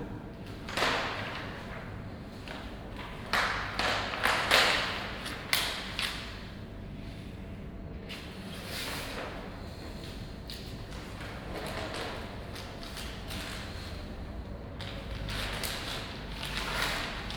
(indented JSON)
{
  "title": "Buntentor, Bremen, Deutschland - bremen, schwankhalle, foyer",
  "date": "2012-06-13 18:40:00",
  "description": "Inside the Theatre foyer. The sound of plastic colour folio being rolled, finally a door.\nsoundmap d - social ambiences and topographic field recordings",
  "latitude": "53.07",
  "longitude": "8.81",
  "altitude": "7",
  "timezone": "Europe/Berlin"
}